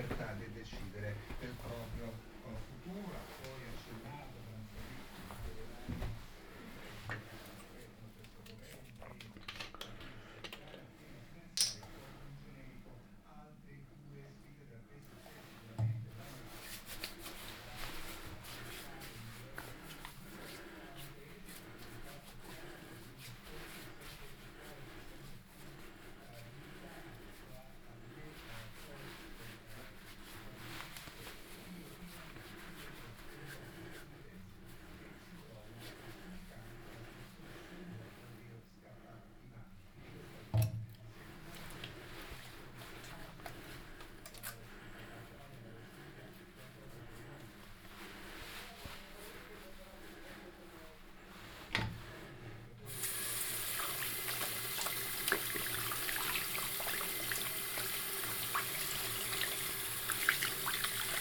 Ascolto il tuo cuore, città. I listen to your heart, city. Chapter XIII - Postal office and shopping in the time of COVID19 Soundwalk
Wednesday March 18 2020. Walking to Postal Office and shopping, San Salvario district, Turin, eight day of emergency disposition due to the epidemic of COVID19.
Start at 10:45 a.m. end at 11:20 a.m. duration of recording 35'03''
The entire path is associated with a synchronized GPS track recorded in the (kml, gpx, kmz) files downloadable here:
18 March 2020, 10:45